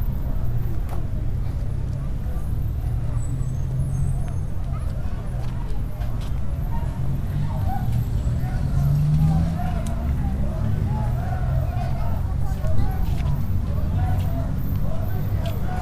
San Jorge, La Paz, Bolivia - 6 de Agosto
por Fernando Hidalgo
4 August 2012, 18:39